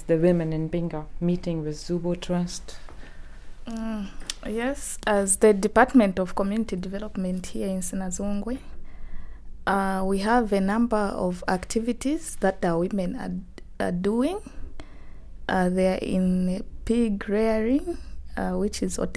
...we are at the at the Civic Centre of Sinazongwe, "the Boma", talking to Mary Mwakoi and Victoria Citalu from the Department of Community Development… this clip is from the end of a longer conversation about women clubs and their activities in the area…. here, we are getting to talk about the limits of such activities and how contacts and exchange among the women across the waters, that is from Binga and from Sinazongwe might improve the lives of women on both sides of the Zambezi...
more from this interview: